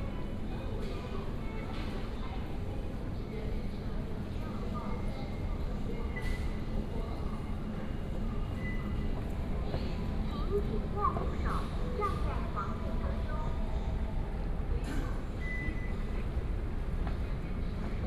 Walk into the MRT station, Construction sound
Sanmin District, Kaohsiung City, Taiwan